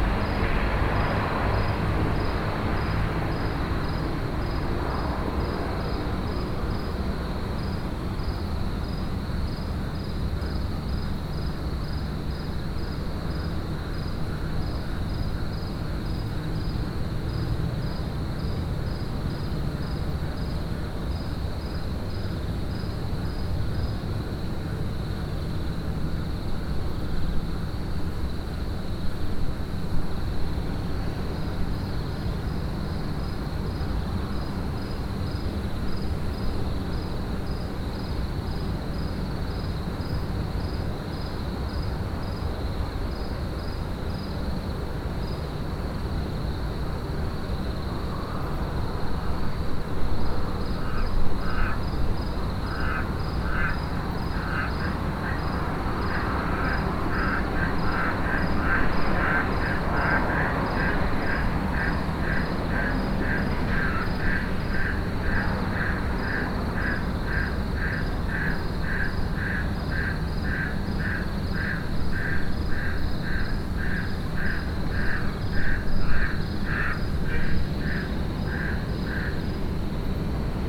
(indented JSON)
{
  "title": "Parktown, Johannesburg, South Africa - frogs in the gardens hum over Jozi...",
  "date": "2016-11-08 22:20:00",
  "description": "listening to the nightly hum of Jozi from a beautiful roof-terrace over the gardens of Parktown...",
  "latitude": "-26.18",
  "longitude": "28.02",
  "altitude": "1695",
  "timezone": "GMT+1"
}